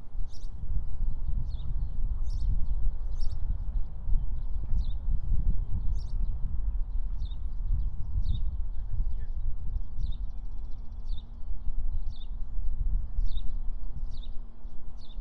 This recording was taken at the Park Lodge at the Terry Trueblood Recreation Area. Like most areas around the trail, the majority of what you'll hear at the lodge will be birds with sounds in the background like traffic and currently construction. This was recorded with a Tascam DR-100MKIII.